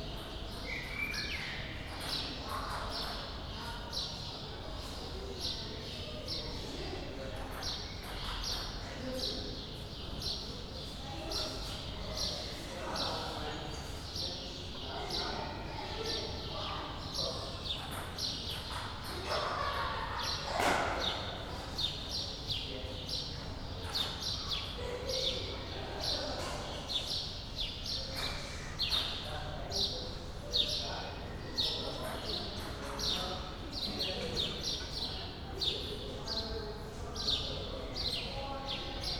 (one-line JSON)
{"title": "Liegnitzer Str., Kreuzberg, Berlin - backyard ambience", "date": "2012-04-29 17:20:00", "description": "sunday early evening ambience in a backyard, Berlin, Liegnitzer Str.\n(tech: Sony PCM D50)", "latitude": "52.49", "longitude": "13.43", "altitude": "42", "timezone": "Europe/Berlin"}